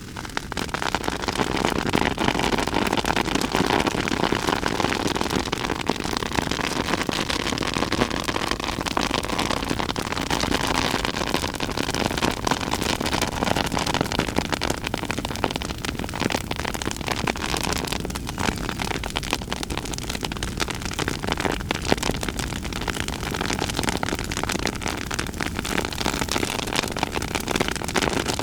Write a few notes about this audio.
A bonfire of burning laurel branches and leaves which burn well even when green. Recorded with a Mix Pre 6 II and 2 Sennheiser MKH 8020s.